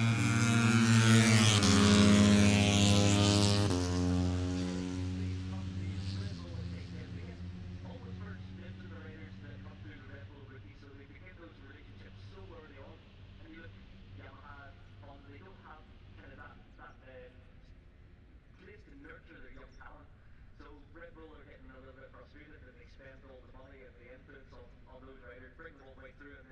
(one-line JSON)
{"title": "Silverstone Circuit, Towcester, UK - british motorcycle grand prix ... 2021", "date": "2021-08-28 09:00:00", "description": "moto three free practice three ... copse corner ... dpa 4060s to MixPre3 ...", "latitude": "52.08", "longitude": "-1.01", "altitude": "158", "timezone": "Europe/London"}